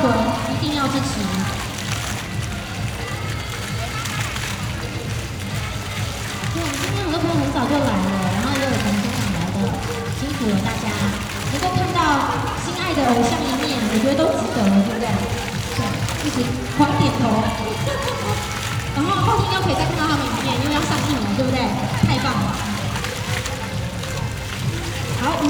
Cianjhen, Kaohsiung - Outside the shopping plaza